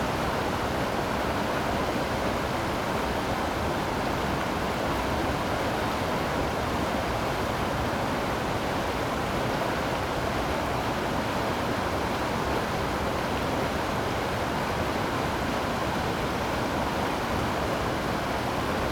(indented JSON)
{
  "title": "Sanxia River, Sanxia Dist., New Taipei City - The sound of water streams",
  "date": "2012-07-08 07:15:00",
  "description": "The sound of water streams\nSony PCM D50",
  "latitude": "24.93",
  "longitude": "121.37",
  "altitude": "39",
  "timezone": "Asia/Taipei"
}